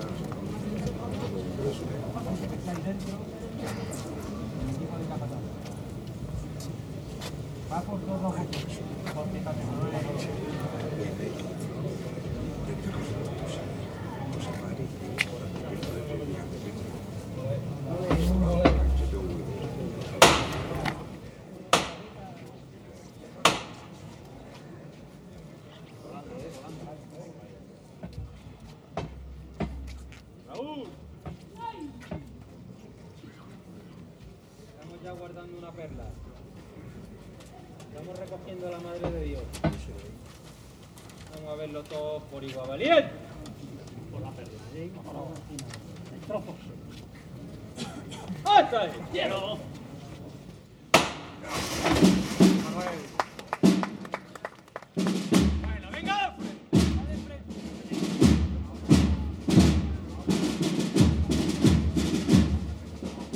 {"title": "Calle Marqués Viudo de Pontejos, Madrid, Spain - easter procession", "date": "2018-04-08 09:33:00", "description": "Final March of the easter procession on the streets of Madrid to Iglesia de San Miguel\nNight on Sunday the 1th of April\nrecorded with Zoom H6 and created by Yanti Cornet", "latitude": "40.42", "longitude": "-3.70", "altitude": "652", "timezone": "Europe/Madrid"}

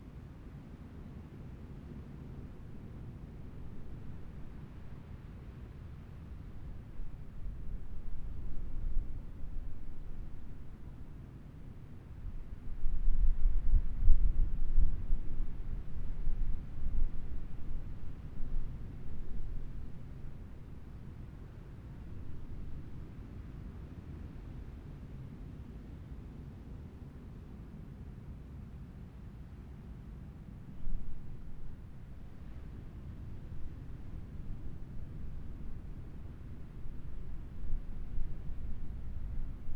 {"title": "Taitung City, Taiwan - At the beach", "date": "2014-01-16 11:19:00", "description": "At the beach, Sound of the waves, Zoom H6 M/S, Rode NT4", "latitude": "22.75", "longitude": "121.16", "timezone": "Asia/Taipei"}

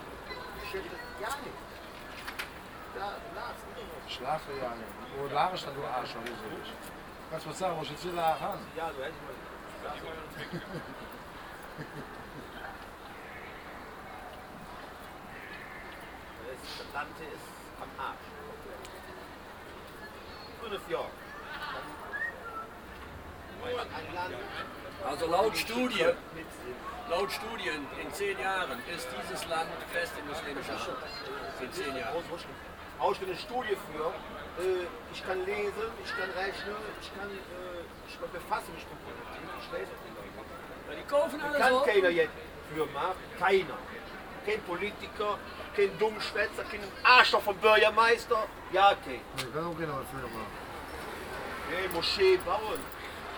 {"title": "cologne, Burgmauer, Taxifahrer Konversation - cologne, burgmauer, taxistand", "date": "2008-04-09 12:36:00", "description": "Taxifahrer am Stand, Konversation im oeffentlichen Raum - Thema hier:Islam und Moschee in Koeln\nproject: social ambiences/ listen to the people - in & outdoor nearfield recordings", "latitude": "50.94", "longitude": "6.96", "altitude": "60", "timezone": "Europe/Berlin"}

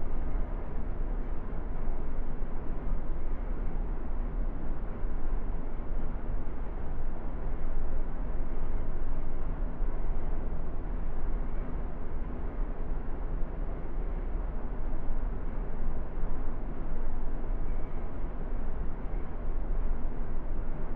{"title": "Altstadt, Düsseldorf, Deutschland - Düsseldorf, Apostel Kapelle, Salm Bestattungen", "date": "2013-01-24 10:40:00", "description": "Inside a small private chapel that is owned by Carl Salm Bestattungen. The sound of the room ventilation varying silenty in the empty candle lighted chapel with a decorated coffin.\nThis recording is part of the intermedia sound art exhibition project - sonic states\nsoundmap nrw - topographic field recordings, social ambiences and art places", "latitude": "51.23", "longitude": "6.77", "altitude": "43", "timezone": "Europe/Berlin"}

